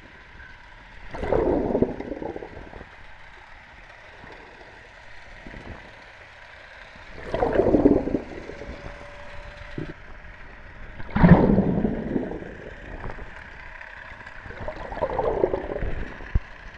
Venice, Italy - Canal hidrophone recording
underwater vibrations of boat traffic and people walking